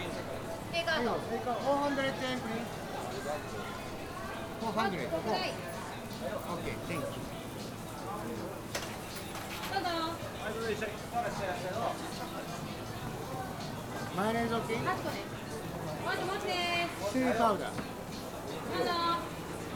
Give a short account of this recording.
a stall selling takoyaki - grilled octopus. cooks taking orders, customers talking, sizzle of frying pans.